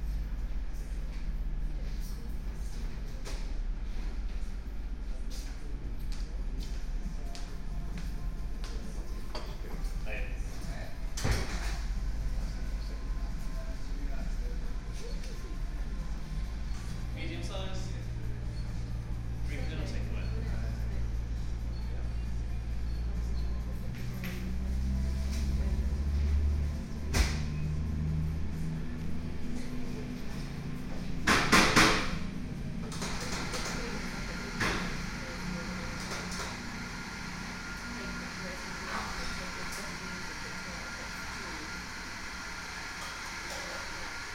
Newton Abbot Pumpkin Cafe at railway station
Newton Abbot, Devon, UK